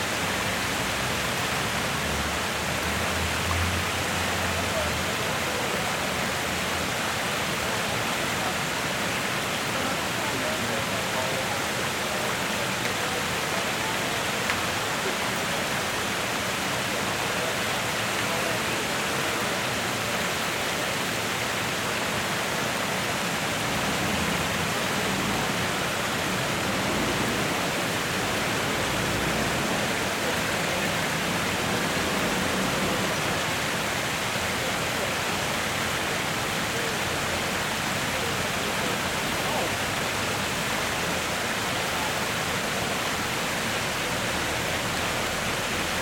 E 53rd St, New York, NY, USA - Artificial Waterfall at 601 Lex Ave
Sounds from the artificial waterfall at 601 Lex Ave recorded at street level, with sounds of traffic bleeding into the sounds of the water.
This waterfall is relatively new and substitutes the original and much bigger artificial waterfall/fountain designed by Hideo Sasaki in the 1970s. The original goal of this waterfall feature was to "mask much of the street noise and add to the feeling that the passerby is free from the congestion of the street (1977)."
New York, United States, 23 August 2022, 10:30